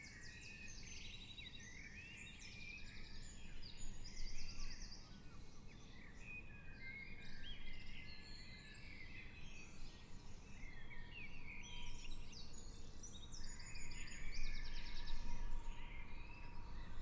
Katesgrove, Reading, UK - Dawn Chorus
The birds were singing so loudly I couldn't sleep, so I decided to record them instead, by suspending a pair of Naiant X-X microphones out of the window.
22 May, 04:00